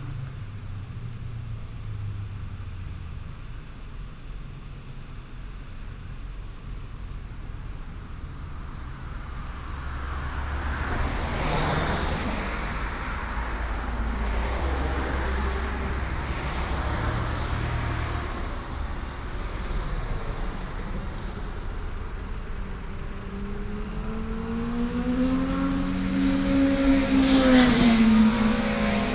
新雪霸門市, Dahu Township - Traffic sound
Beside the road, Heavy motorcycle lover, Traffic sound
Binaural recordings, Sony PCM D100+ Soundman OKM II
Dahu Township, 中原路6-6號, 24 September, ~08:00